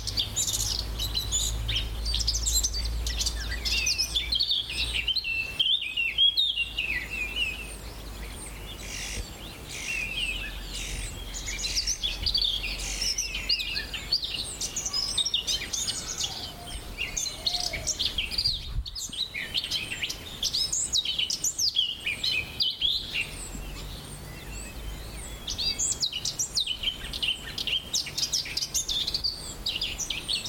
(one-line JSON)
{"title": "Férange, Ébersviller, France - Early Birds", "date": "2012-04-28 09:11:00", "description": "Birds in the morning", "latitude": "49.29", "longitude": "6.40", "altitude": "239", "timezone": "Europe/Paris"}